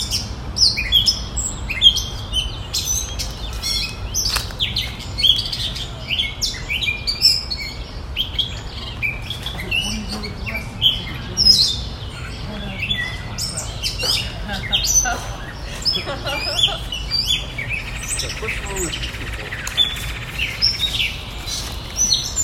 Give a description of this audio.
Birdsong in Rock Creek Park on a Saturday evening after a storm